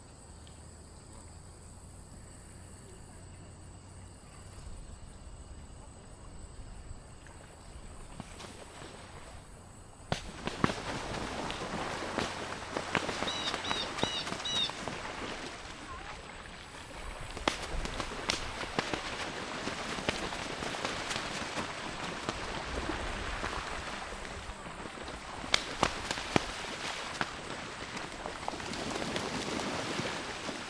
Sounds heard sitting on the shore of Kunkel Lake (Canadian Geese slapdown), Ouabache State Park, Bluffton, IN, 46714, USA